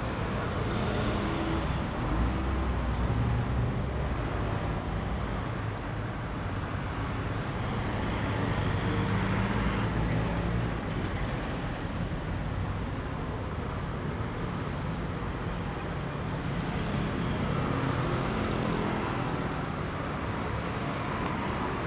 Railway level crossing, Traffic Sound, Train traveling through
Zoom H2n MS+XY